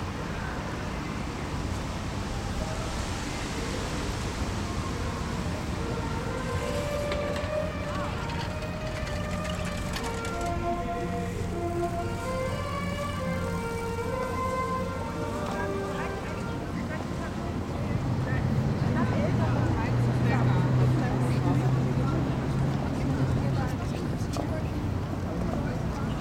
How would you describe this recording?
This is a 24h soundscape in front of the shopping mall Mercado in Ottensen that has changed the face of this quartier profoundly